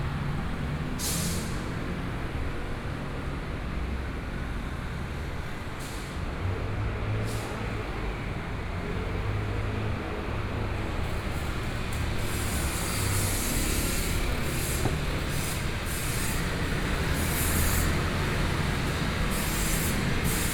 Jungli City, Taoyuan County - Noise
Traffic Noise, Factory noise, Sony PCM D50+ Soundman OKM II
Taoyuan County, Taiwan